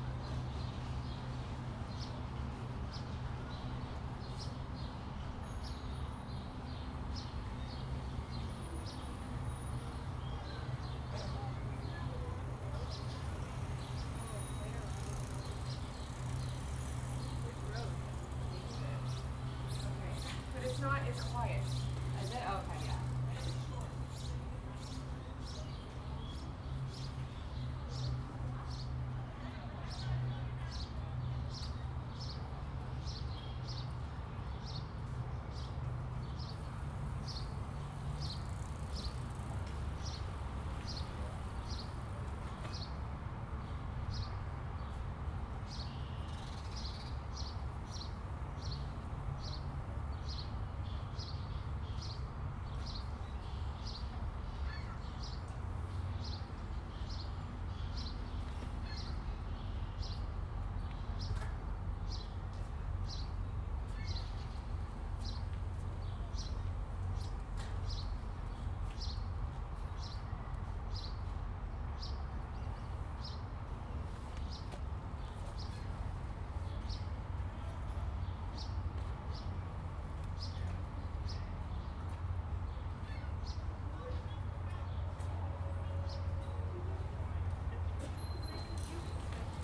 Palace Pier Ct, Etobicoke, ON, Canada - Seagulls and Bikes Underneath Humber Bridge
Recorded in the daytime under the bridge aiming at the water, mostly sounds of birds and wildlife along with the nearby highway. A few bikes, boats, and sea-doos passing by.
Recorded on a Zoom H2N